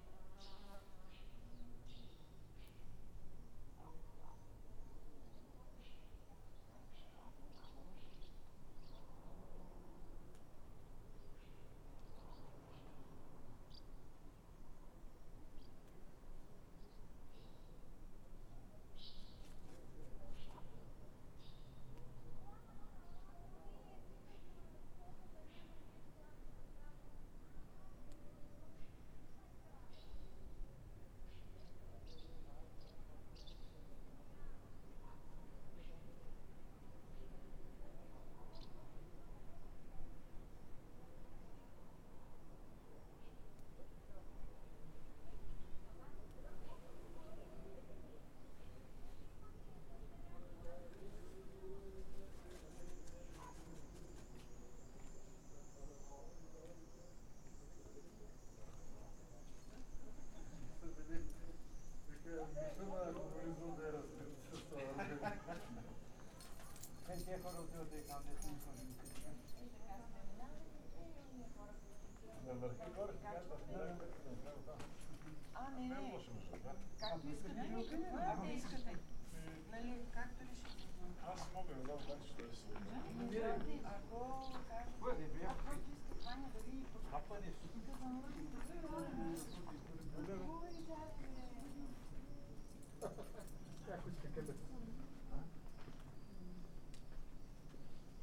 Buzludzha, Bulgaria, Backside - Echos at the Backside of Buzludzha
On the windy top of the mountain, where the socialist party of Bulgaria let built Buzludzha, there is a quiet place on the back of the building, where the echoes of the voices of the visitors, that are passing by, are caught.